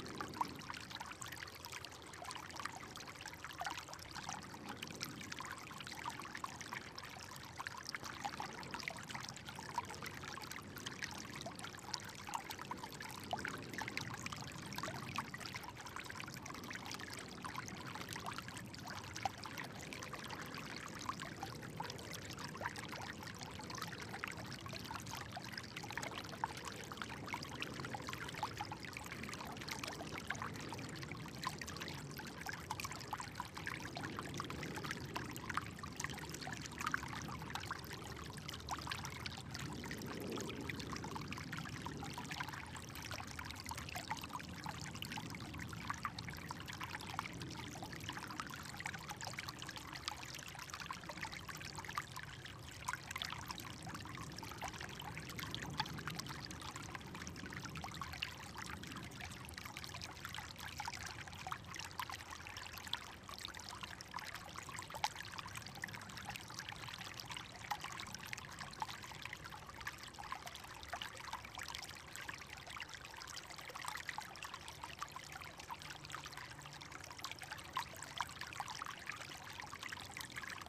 gently running creek from remains of old sulfur mines
Alameda County, California, United States of America